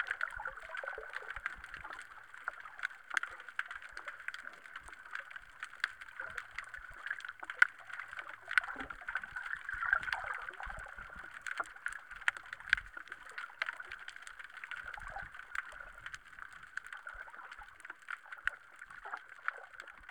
Kos, Greece, yachts pier underwater